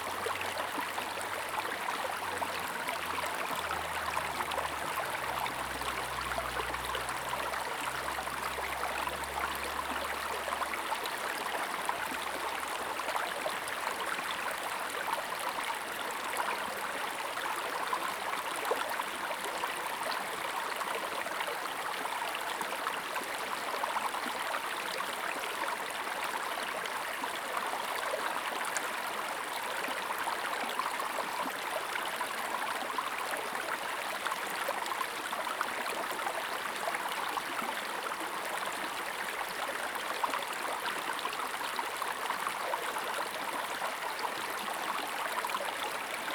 種瓜坑溪, 成功里Puli Township - Stream
Stream
Zoom H2n MS+XY
Puli Township, Nantou County, Taiwan, 20 April 2016, ~15:00